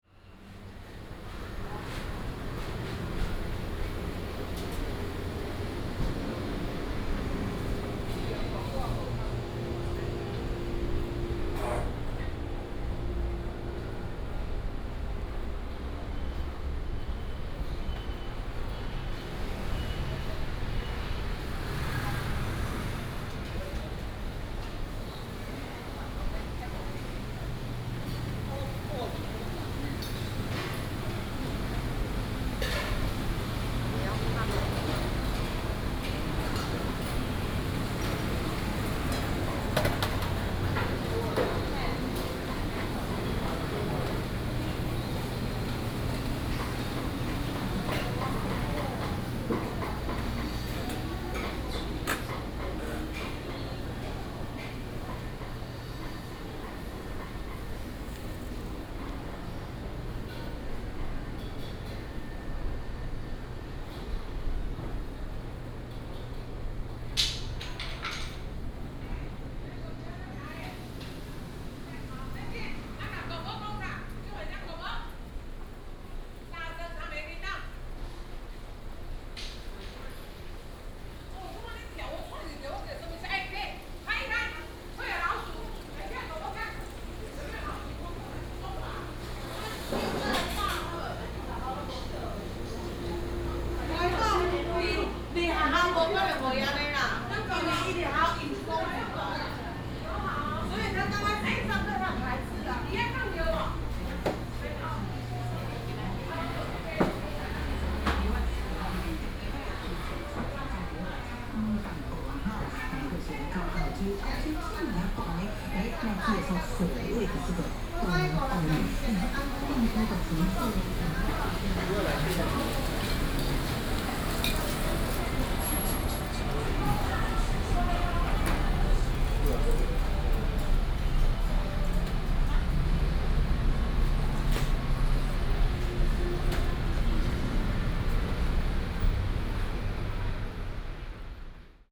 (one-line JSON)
{"title": "臺中第二市場, Taichung City - Walking through the market", "date": "2016-09-06 17:22:00", "description": "Walking through the market, Traffic Sound", "latitude": "24.14", "longitude": "120.68", "altitude": "87", "timezone": "Asia/Taipei"}